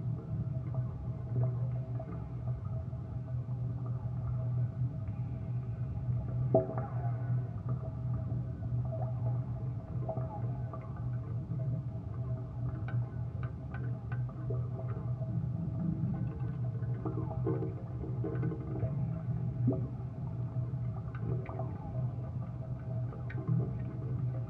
Gabaldón, Cuenca, España - Abandoned oil borehole
Two contact microphones placed on an oil borehole.